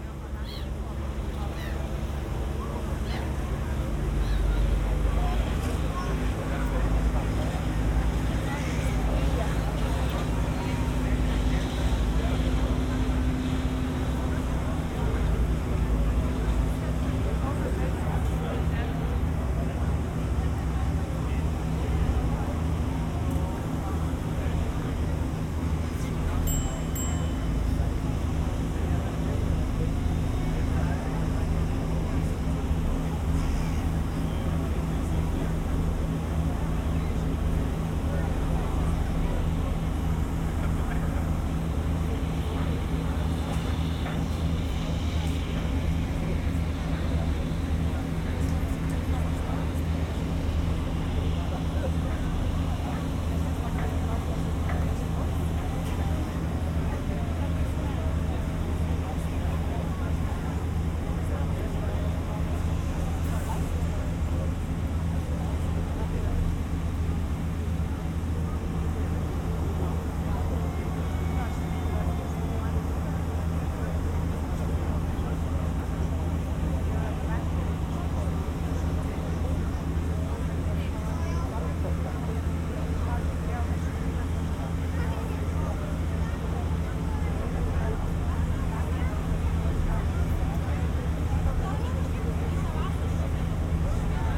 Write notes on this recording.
On a passengers ferry from Klaipeda to Smiltyne. Sennheiser Ambeo headset recording.